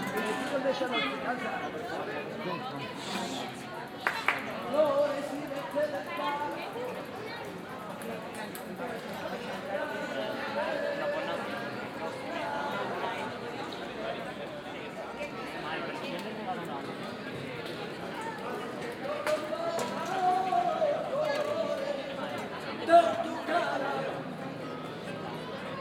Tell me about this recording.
At the Plaza de SAn Andres in the evening. The sound of people sitting outside at the street bars talking - a singer and a guitar player. international city sounds - topographic field recordings and social ambiences